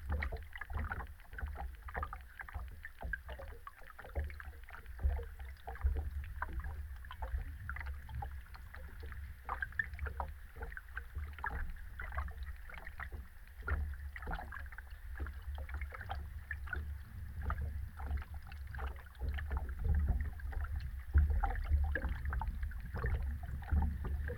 Antakalnis, Lithuania, hydrophone at the bridge
underwater microphone at the bridge
Trakų rajono savivaldybė, Vilniaus apskritis, Lietuva, 1 June 2020, 17:50